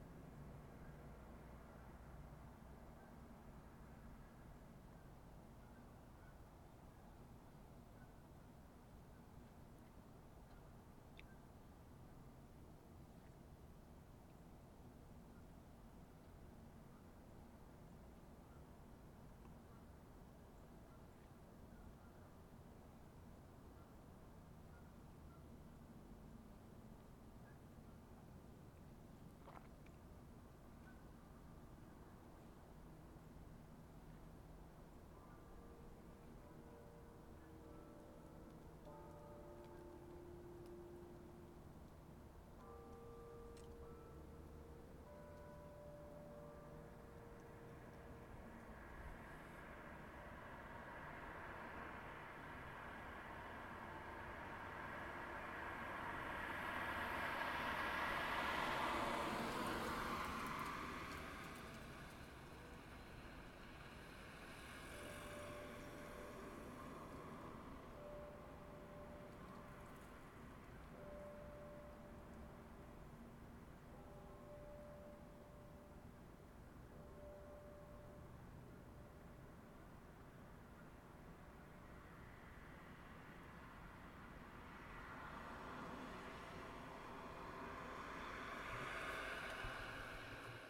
{
  "title": "Allentown, PA, USA - South East Corner of Campus",
  "date": "2014-12-07 17:00:00",
  "description": "The bell tolls 5pm on this crisp December evening.",
  "latitude": "40.60",
  "longitude": "-75.50",
  "altitude": "110",
  "timezone": "America/New_York"
}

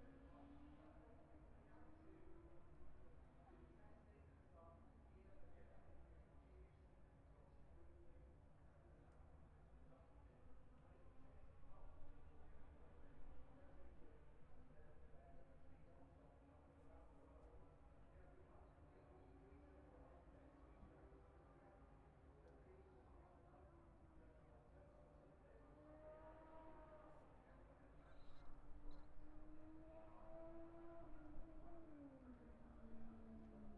{
  "title": "Towcester, UK - british motorcycle grand prix 2022 ... moto two ...",
  "date": "2022-08-05 10:55:00",
  "description": "british motorcycle grand prix 2022 ... moto two free practice one ... zoom h4n pro integral mics ... on mini tripod ...",
  "latitude": "52.07",
  "longitude": "-1.01",
  "altitude": "157",
  "timezone": "Europe/London"
}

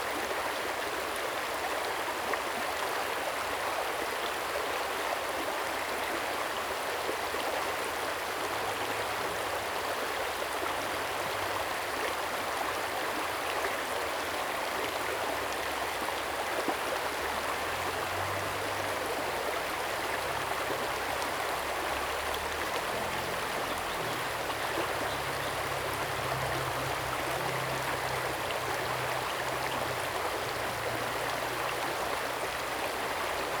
{
  "title": "Zhong Lu Keng River, 桃米里, Puli Township - sound of water streams",
  "date": "2015-08-26 16:22:00",
  "description": "Streams and birdsong, The sound of water streams\nZoom H2n MS+XY",
  "latitude": "23.94",
  "longitude": "120.92",
  "altitude": "490",
  "timezone": "Asia/Taipei"
}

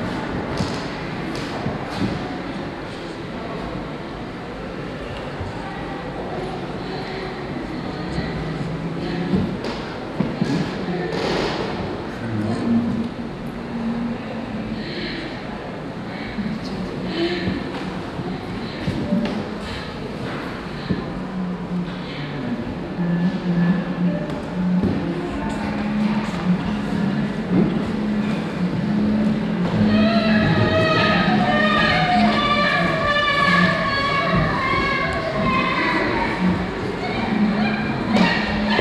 {
  "title": "Altstadt, Frankfurt am Main, Deutschland - Frankfurt, Schirn, art hall, video works",
  "date": "2013-05-11 21:05:00",
  "description": "Inside the Schirn Kunsthalle during the Yoko Ono exhibition. The sound of two video works that are presented parallel in a small, seperated space of the exhibition. Also to be heard the sound of visitors entering and leaving the space.\nsoundmap d - topographic field recordings, social ambiences and art places",
  "latitude": "50.11",
  "longitude": "8.68",
  "altitude": "104",
  "timezone": "Europe/Berlin"
}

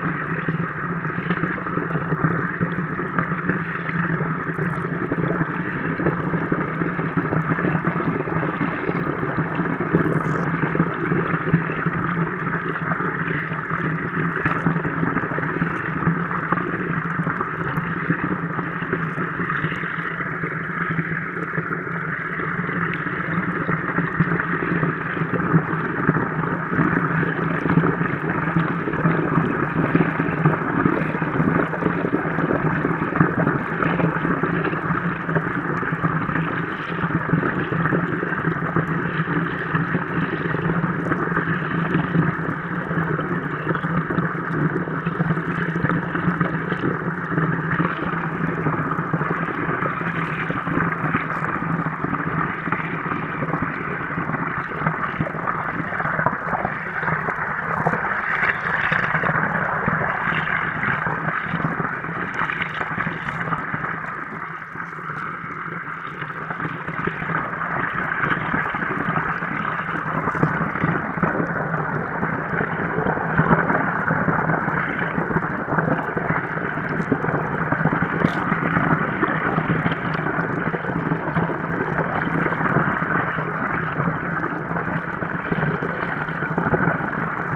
{
  "title": "Pralognan, French Alps, a mountain river with Hydrophones",
  "date": "2010-06-27 14:19:00",
  "description": "Pralognan, a mountain river with hydrophones. Pralognan, la rivière enregistrée avec des hydrophones.",
  "latitude": "45.39",
  "longitude": "6.71",
  "altitude": "1403",
  "timezone": "Europe/Paris"
}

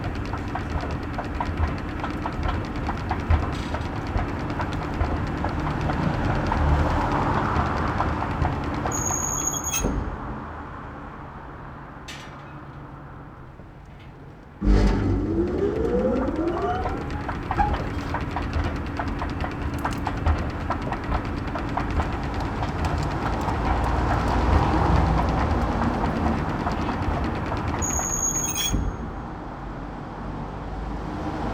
{
  "title": "körnerstr., ubahn / subway - rolltreppe / stairway, street level",
  "date": "2009-09-26 01:00:00",
  "latitude": "50.95",
  "longitude": "6.92",
  "altitude": "54",
  "timezone": "Europe/Berlin"
}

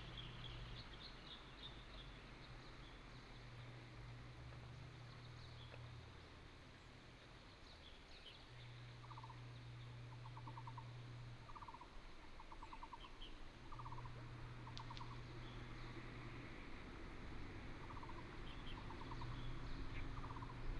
{
  "title": "草埔, 獅子鄉南迴公路 - Bird and Traffic sound",
  "date": "2018-03-28 06:26:00",
  "description": "in the morning, Traffic sound, Bird call\nBinaural recordings, Sony PCM D100+ Soundman OKM II",
  "latitude": "22.23",
  "longitude": "120.80",
  "altitude": "235",
  "timezone": "Asia/Taipei"
}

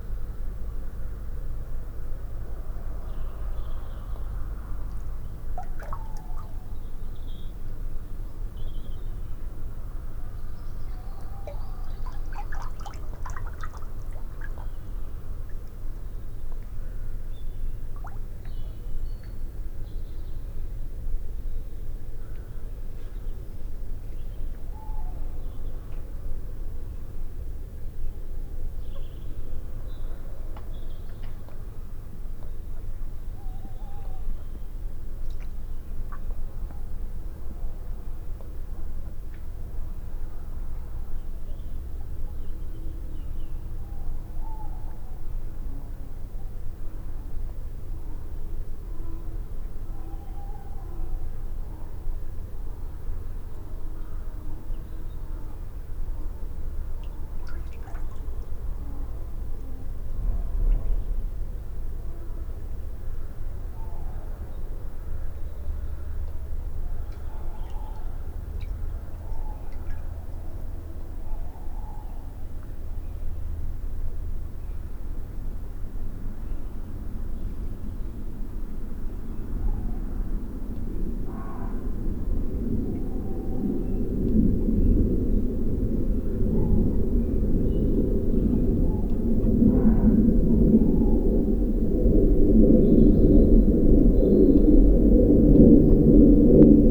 {
  "title": "Sounds of the Night, Malvern Hills, Worcestershire, UK - Night",
  "date": "2019-03-09 00:03:00",
  "description": "Natural, man-made and mysterious sounds from an overnight recording on the Malvern Hills.\nMixPre 3 with 2 x Sennheiser MKH 8020s",
  "latitude": "52.08",
  "longitude": "-2.34",
  "altitude": "291",
  "timezone": "Europe/London"
}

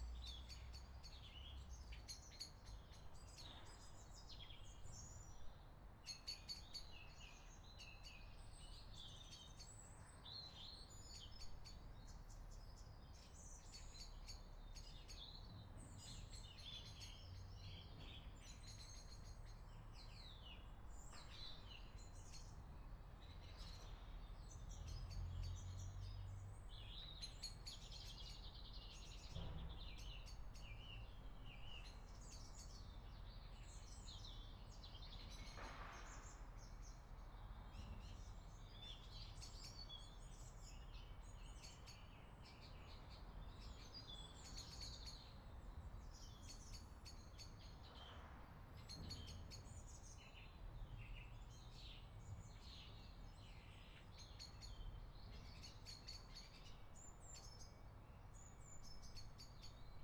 {
  "title": "Chesterton High Street, Cambridge, Cambridgeshire, UK - Winter morning birds",
  "date": "2013-01-05 07:30:00",
  "description": "Recorded in a back garden before sunrise.",
  "latitude": "52.22",
  "longitude": "0.15",
  "altitude": "8",
  "timezone": "Europe/London"
}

